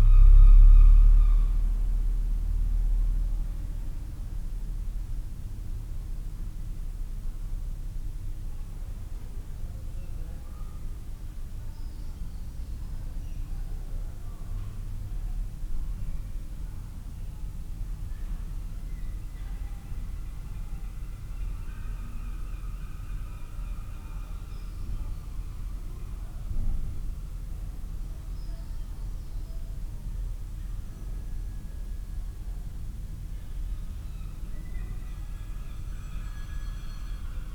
Baxtergate, Whitby, UK - inside ... St Ninians Church ... outside ... Whitby ...
inside ... St Ninians Church ... outside ... Whitby ... lavalier mics clipped to sandwich box ... bird calls ... herring gull ... dunnock ... background of voices and traffic ...
22 February 2019, 09:30